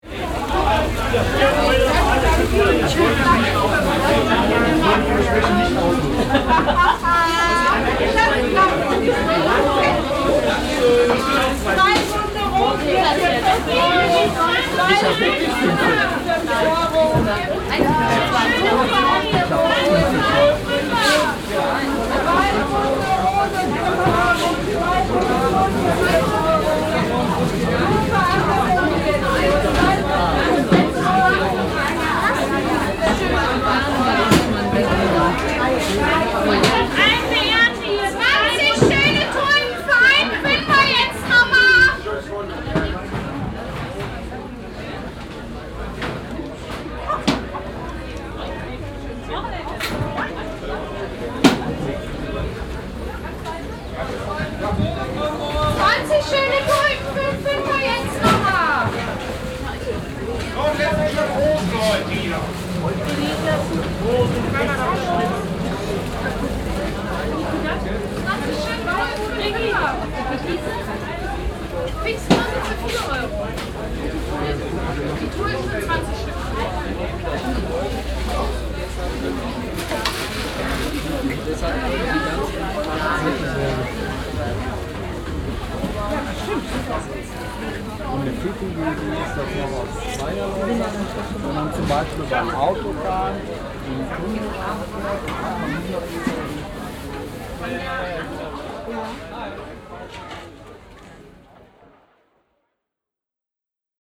Rüttenscheid, Essen, Deutschland - essen, rüttenscheider markt, saturday market
Am Rüttenscheider Markt zum Markt am Samstag. Hier bei den Ständen der Blumenverkäufer. Die Klänge der Stimmen, Plastiktüten, Gespräche zwischen Kunden und Verkäufer. Im Hintergrund Strassenverkehr.
At the saturday market place. Here at the flower seller point. The sounds of voices - customers and sellers conversation, plastic bags. In The background street traffic .
Projekt - Stadtklang//: Hörorte - topographic field recordings and social ambiences